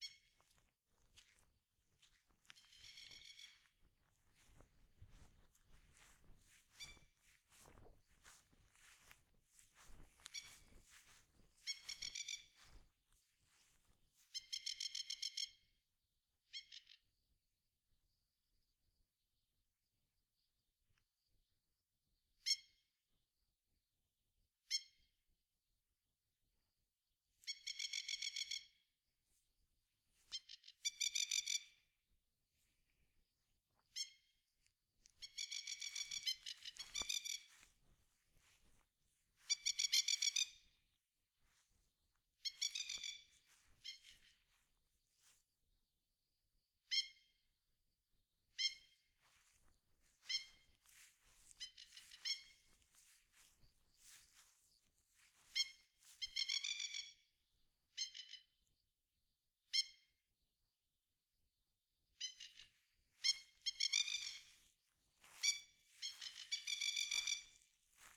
Coomba Park NSW, Australia - Magpie calling
Two magpies communicating from two locations less than 20 metres apart. Recording taken by the lake at Coomba Park using an M-Audio Microtrack II stereo recorder.